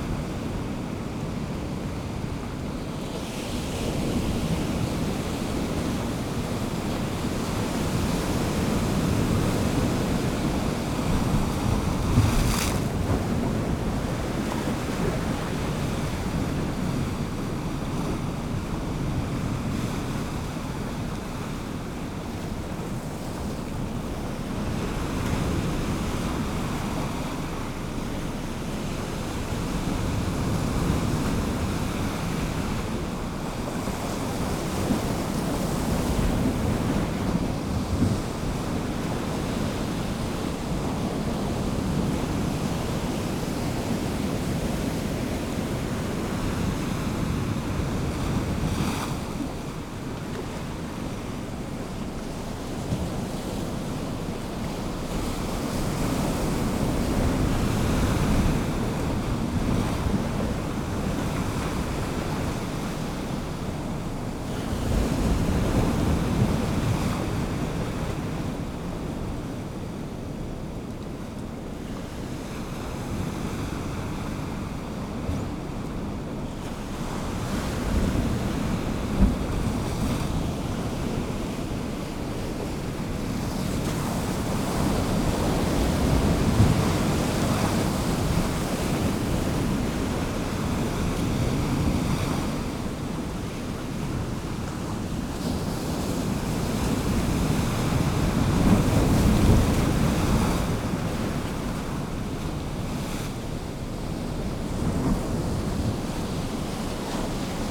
East Pier, Whitby, UK - Mid tide on the slip way ...
Mid tide on the slip way ... lavalier mics clipped to bag ... bird calls from rock pipit and herring gull ...